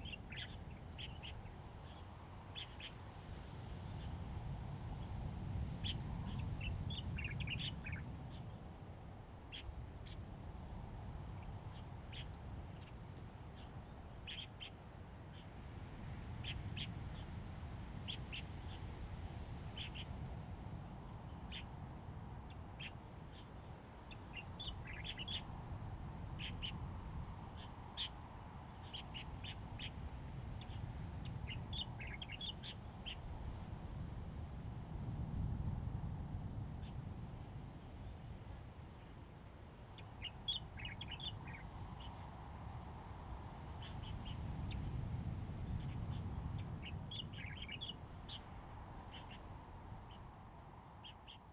Birdsong, In windbreaks, The weather is very hot
Zoom H2n MS +XY
Taitung County, Taiwan, 5 September 2014